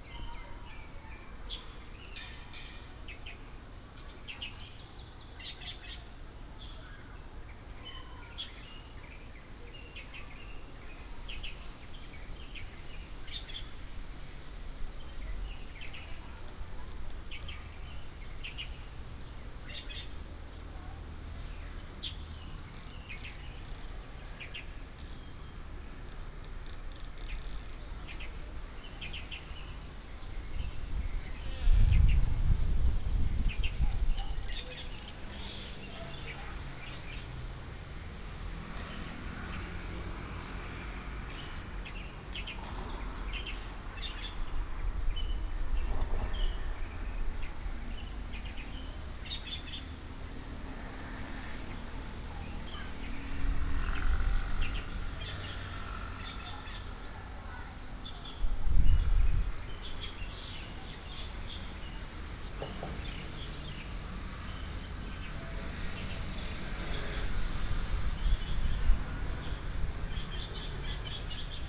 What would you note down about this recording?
Under the big banyan tree, wind and Leaves, Bird, Binaural recordings, Sony PCM D100+ Soundman OKM II